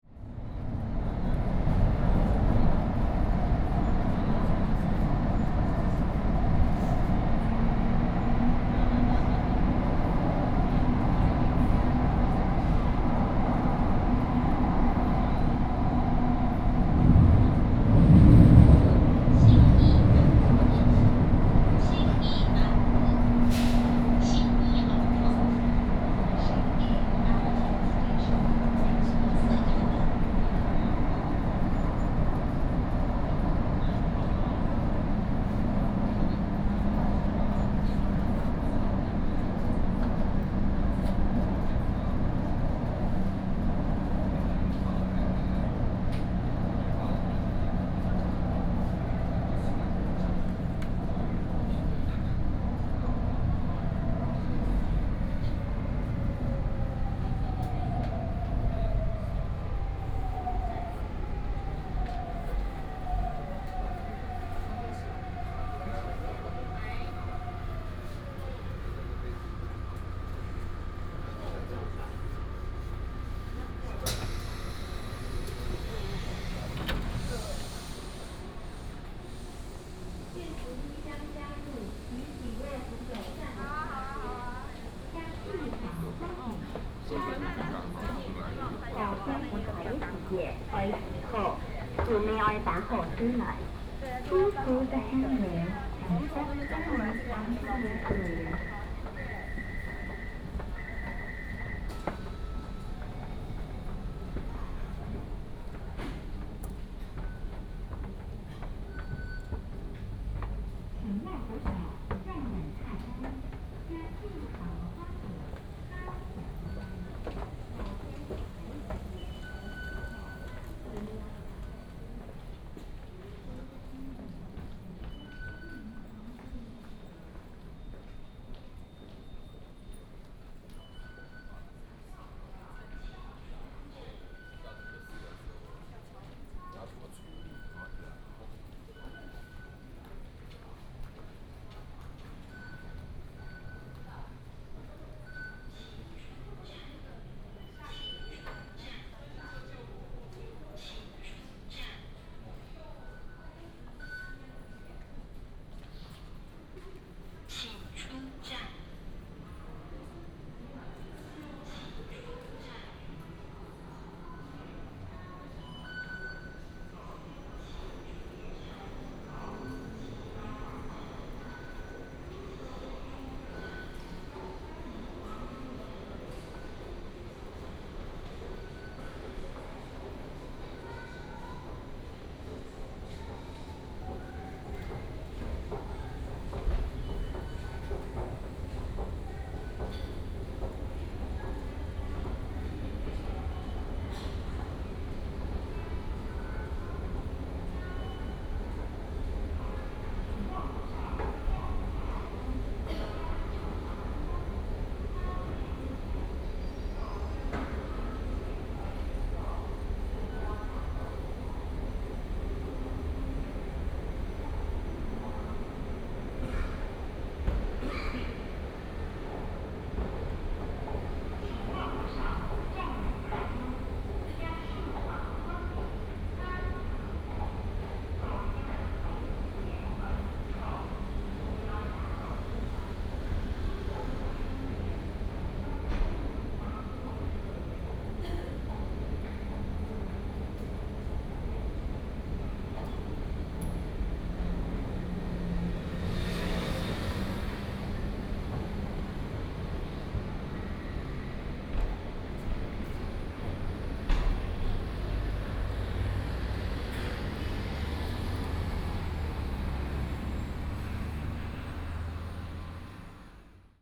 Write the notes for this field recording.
From the MRT station car, Then after the platform and hall, went outside the station